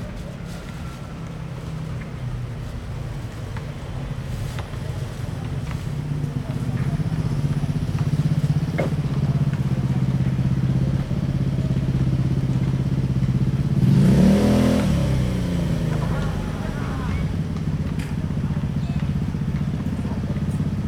Sanmin, Kaohsiung - Next to schools